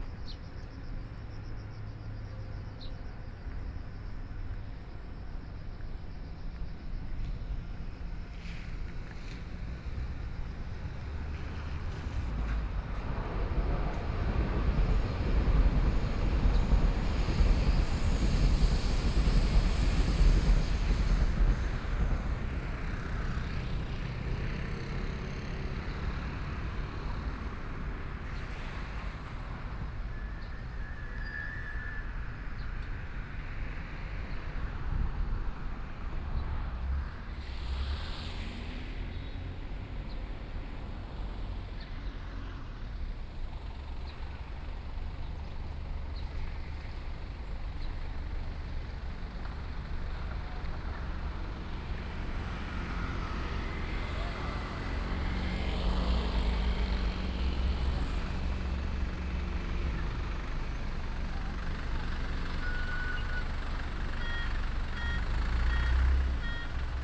Nongquan Rd., Yilan City - Trains traveling through
Traffic Sound, Trains traveling through, Next to the railway
Sony PCM D50+ Soundman OKM II